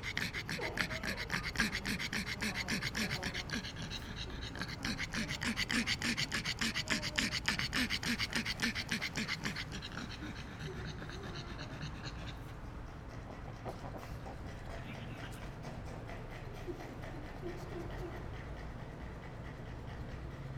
tamtamART.Taipei - dog
The same dog appeared in the gallery, Sony PCM D50